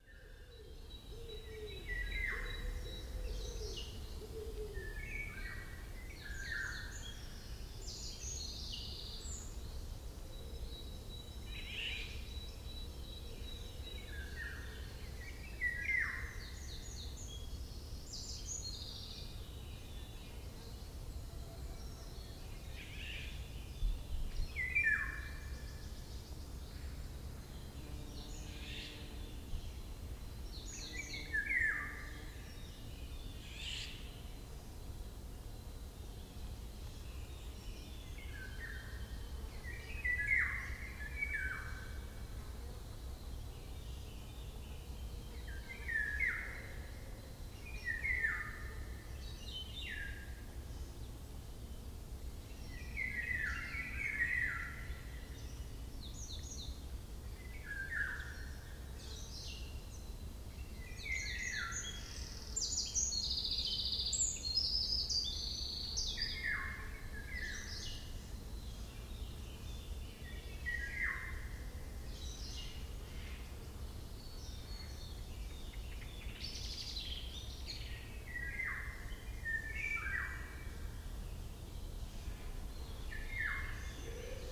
Chem. des Charmettes, Chambéry, France - Loriots aux Charmettes
Dans le bois des Charmettes tout près de Chambéry et relativement abrité des bruits de la ville, chants de loriots et troglodyte mignon.
France métropolitaine, France, 28 April, 10:00